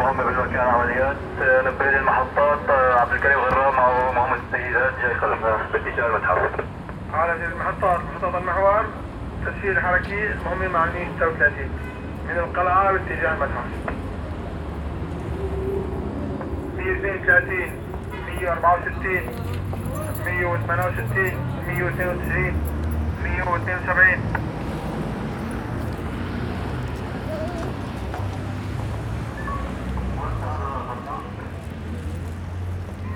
LA BAIGNOIRE DES AGITÉS/Radio cops at the corner - LA BAIGNOIRE DES AGITÉS/Radio cops at the corner Aleppo syria